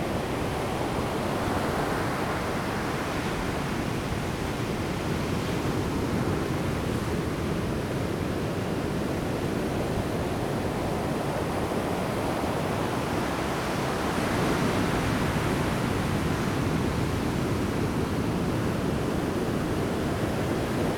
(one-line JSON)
{"title": "牡丹灣, 牡丹鄉, Pingtung County - In the bay", "date": "2018-04-02 12:38:00", "description": "bay, Sound of the waves, wind\nZoom H2n MS+XY", "latitude": "22.20", "longitude": "120.89", "altitude": "2", "timezone": "Asia/Taipei"}